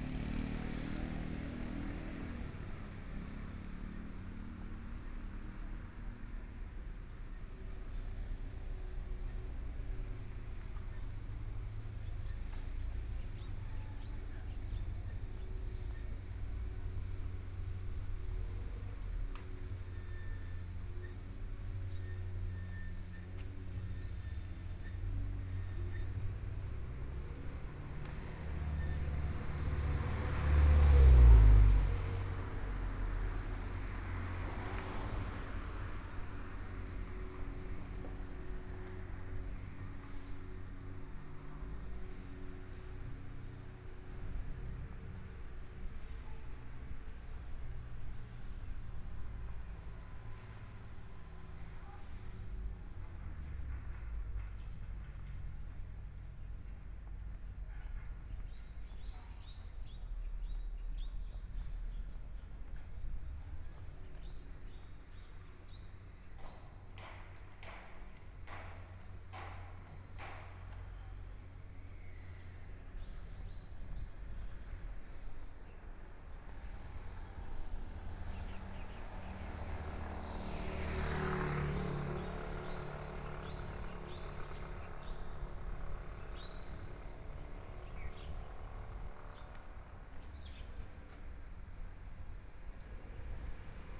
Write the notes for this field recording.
walking in the Street, Construction Sound, Birds sound, Traffic Sound, Environmental sounds, Please turn up the volume, Binaural recordings, Zoom H4n+ Soundman OKM II